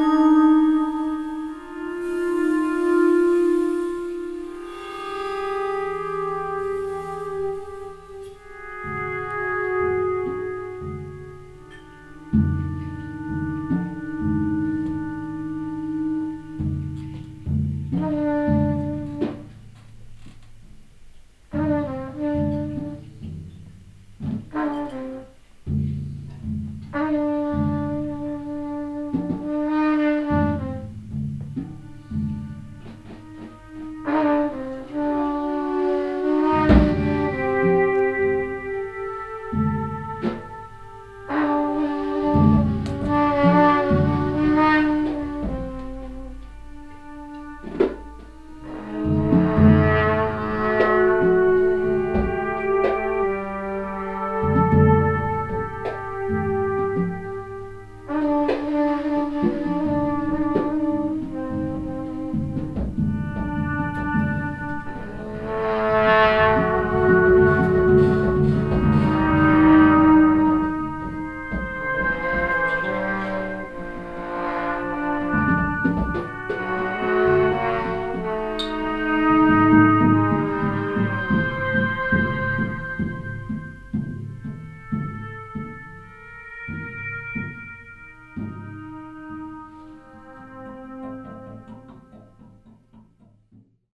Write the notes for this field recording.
im konzertraum des improvisationsmekka der domstadt - hier ausschnitt aus einer trioimprovisation mit dem irischen gitarristen O' Leary, soundmap nrw: social ambiences/ listen to the people - in & outdoor nearfield recordings